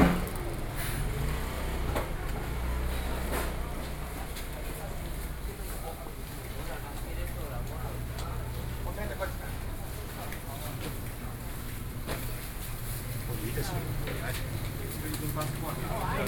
{"title": "Zhongzheng Rd., Xizhi Dist., New Taipei City - Traditional markets", "date": "2012-11-04 07:14:00", "latitude": "25.07", "longitude": "121.66", "altitude": "27", "timezone": "Asia/Taipei"}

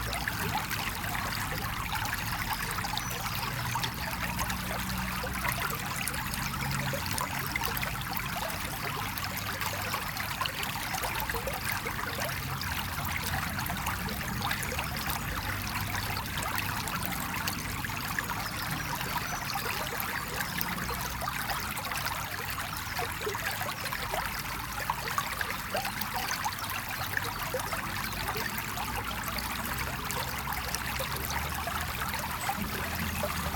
{"title": "Rue du Moulin, Linkebeek, Belgique - Small river - ruisseau", "date": "2022-03-26 10:16:00", "description": "Tech Note : Ambeo Smart Headset AB position.", "latitude": "50.78", "longitude": "4.33", "altitude": "54", "timezone": "Europe/Brussels"}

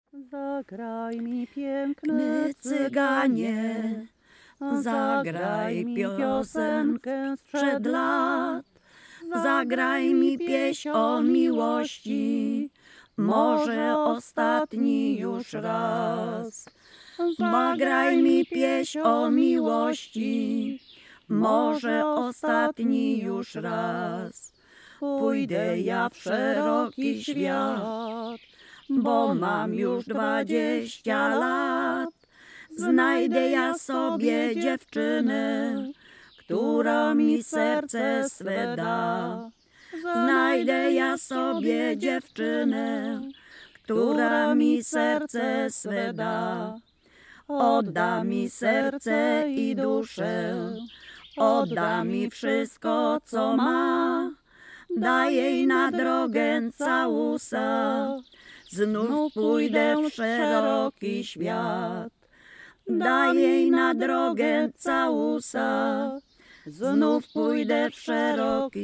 {"title": "Loryniec - Piosenka o Cyganie", "date": "2014-07-15 12:28:00", "description": "Piosenka nagrana w ramach projektu : \"Dźwiękohistorie. Badania nad pamięcią dźwiękową Kaszubów\".", "latitude": "54.05", "longitude": "17.89", "altitude": "140", "timezone": "Europe/Warsaw"}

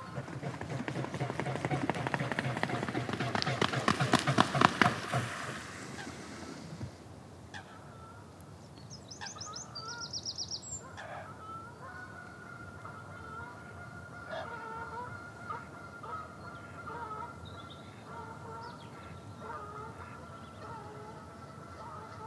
{"title": "Whiteknights Lake, University of Reading, Reading, UK - Swan skidding across water and flapping its mighty wings", "date": "2017-04-12 16:59:00", "description": "Large male swan skidding across the lake and flapping his wings to intimidate all the other birds in the vicinity.", "latitude": "51.44", "longitude": "-0.94", "altitude": "62", "timezone": "Europe/London"}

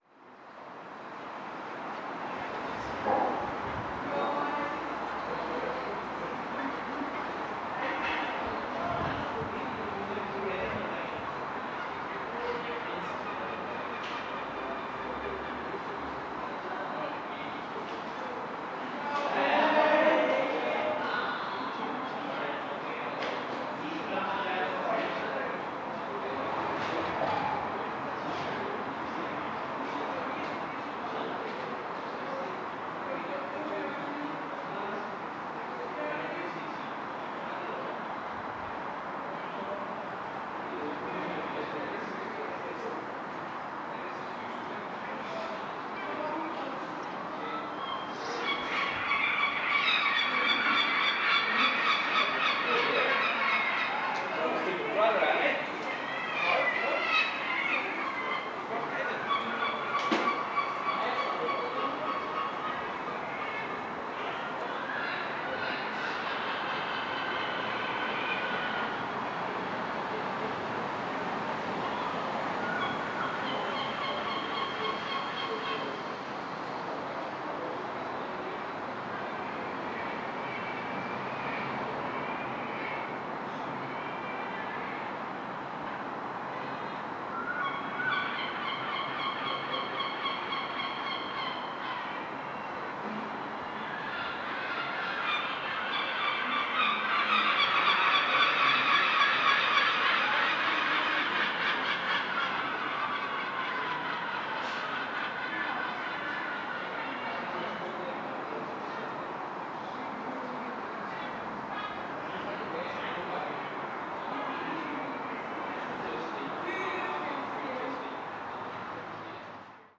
{"title": "Hill St, Belfast, UK - Commercial Court", "date": "2021-03-27 17:45:00", "description": "Recording of a group of people at a distance but their voices resonate down the cobblestone street, large group of seagulls flying above, sirens passing along another street, and a couple walking down the alleyway.", "latitude": "54.60", "longitude": "-5.93", "altitude": "6", "timezone": "Europe/London"}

